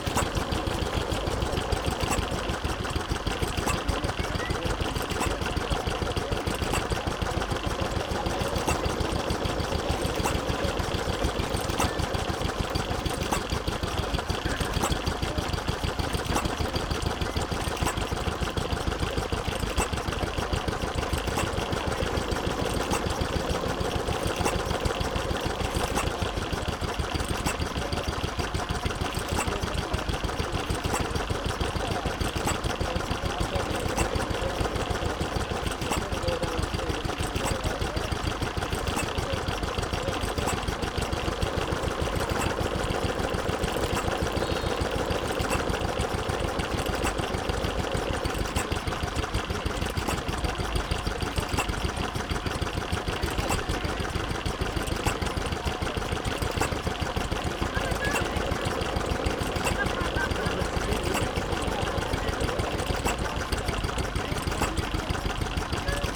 Static engine ... Wolseley WD2 1947 engine ... last used on an agricultural lifter for moving bales ...
York, UK, 25 July 2017